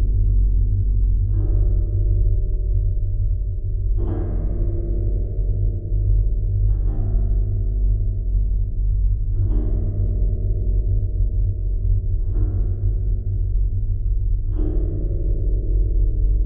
Lithuania, Sartai viewtower
Strong wind. Geophone on the stairways of observation tower.
Panevėžio apskritis, Lietuva, 30 May 2021